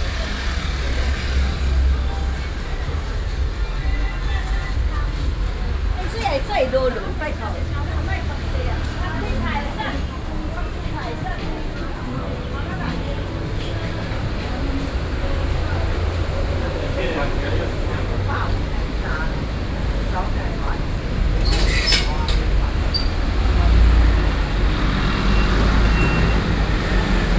Beitou, Taipei - Traditional Market
walking in the Traditional Market, Sony PCM D50 + Soundman OKM II
23 June, 09:54